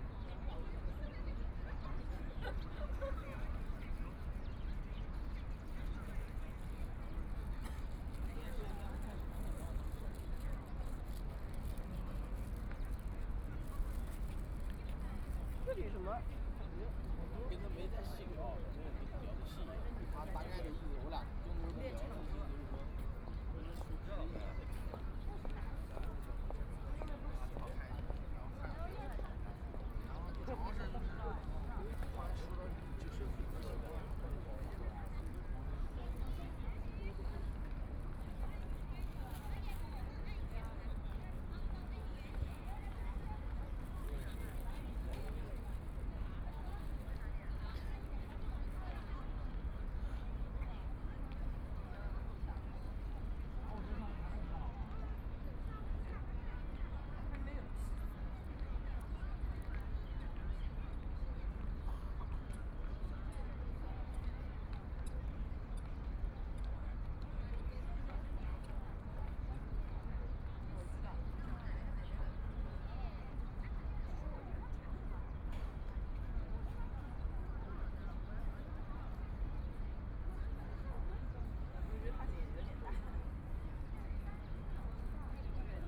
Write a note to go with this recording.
In the park plaza, Tourists from all over, Office workers lunch break, Binaural recording, Zoom H6+ Soundman OKM II